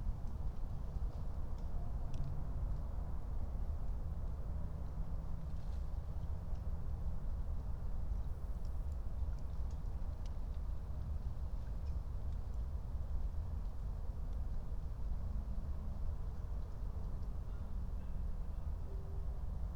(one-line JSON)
{"date": "2021-06-06 00:04:00", "description": "00:04 Berlin, Königsheide, Teich - pond ambience", "latitude": "52.45", "longitude": "13.49", "altitude": "38", "timezone": "Europe/Berlin"}